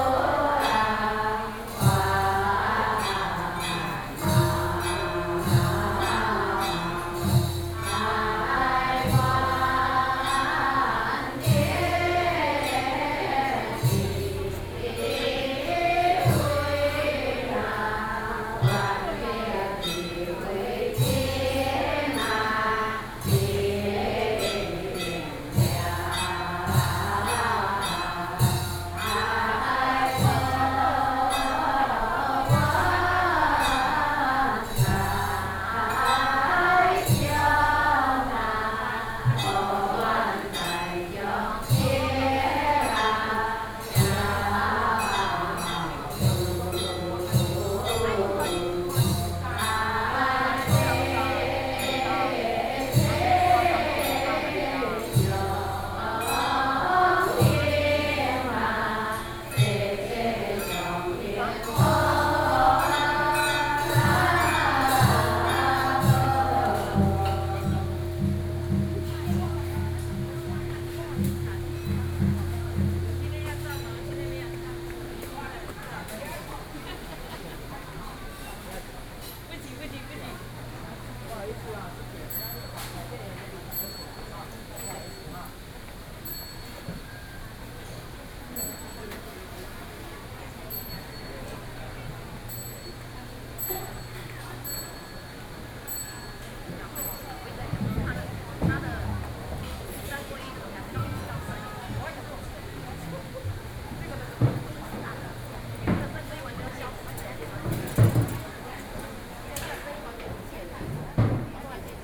Mengjia Longshan Temple, Taipei City - chanting
walking around in the Temple, hundreds of old woman are sitting in the temple chanting together, Sony PCM D50 + Soundman OKM II
萬華區 (Wanhua District), 台北市 (Taipei City), 中華民國, 25 May 2013, ~09:00